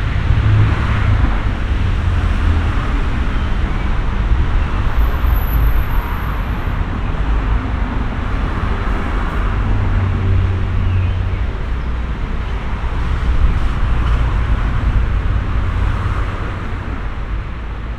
small park with playground nearby noisy street, birds vs. cars
the city, the country & me: june 8, 2012